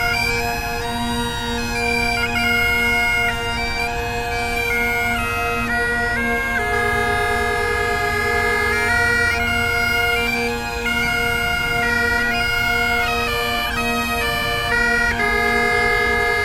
Tempelhof, Berlin - bagpipe player practising
3 bagpipe players practising on Tempelhofer Feld, surrounded by the noise of the nearby Autobahn.
(Sony PCM D50, DPA4060)
Berlin, Germany, 11 August 2013, 16:00